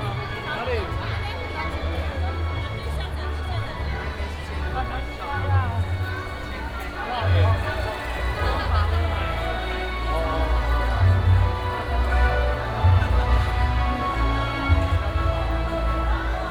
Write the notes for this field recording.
Farmers' festival activities, Binaural recordings, Sony PCM D100+ Soundman OKM II